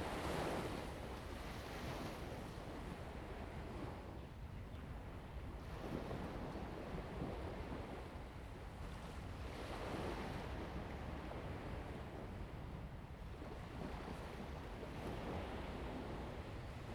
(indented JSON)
{
  "title": "雙口, Lieyu Township - At the beach",
  "date": "2014-11-04 10:21:00",
  "description": "Sound of the waves, At the beach\nZoom H2n MS+XY",
  "latitude": "24.44",
  "longitude": "118.23",
  "altitude": "4",
  "timezone": "Asia/Shanghai"
}